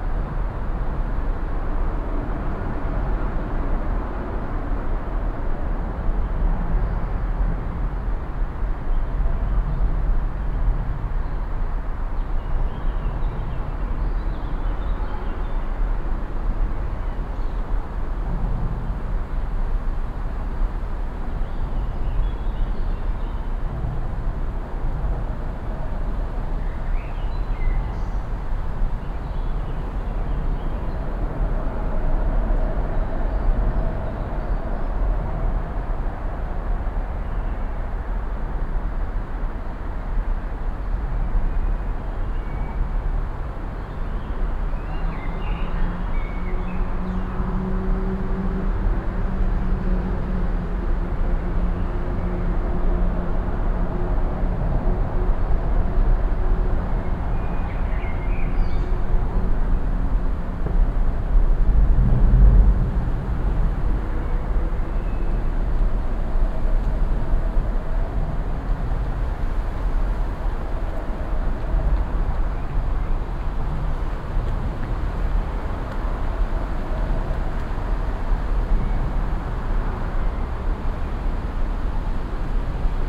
Wik, Kiel, Deutschland - Under the bridge
Directly under the bridge with lots of traffic, low frequency rumble from the maintenance chambers and gangways on the lower side of the bridge, audible expansion gaps, birds singing, wind in the trees, a jogger passing by
Binaural recording, Zoom F4 recorder, Soundman OKM II Klassik microphone with wind protection